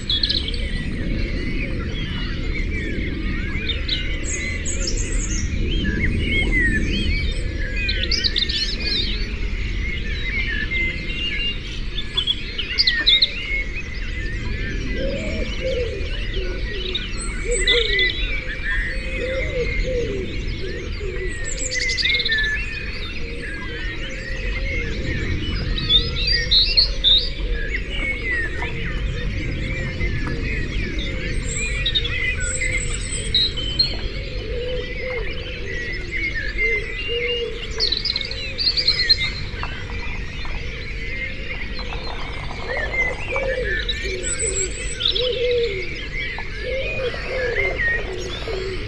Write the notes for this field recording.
Recorded overnight on the 5-6th June as an experiment by hanging the microphone rig out of the dormer window facing east towards the back garden. The mics are flat against the roof tiles which seems to enhance the stereo separation and maybe even a certain amount of boundary effect. The fox at the beginning has an echo I have not heard before, probably from the side of the Malvern Hills. The many jets are because of an international flight line a few miles south in Gloucestershire and is unusually busy possibly because of relaxed Covid restrictions in the UK. The cuckoo is the first I have heard for a few years. The ducks are 14 chicks, now almost fledged and ready to fly on our pond. This is the second year Mallard have nested here. This section of the overnight recording starts at 4.05am and on this day 77 years ago my Uncle Hubert was preparing to go ashore at Arromanches. I wonder what sounds he would have heard.